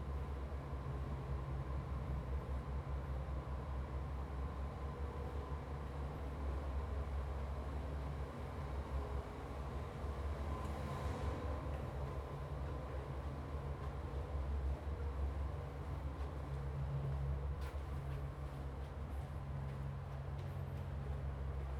鐵漢堡, Lieyu Township - Underground tunnels
walking in the Underground tunnels, Abandoned military facilities
Zoom H2n MS +XY
金門縣 (Kinmen), 福建省, Mainland - Taiwan Border, 4 November 2014, 08:51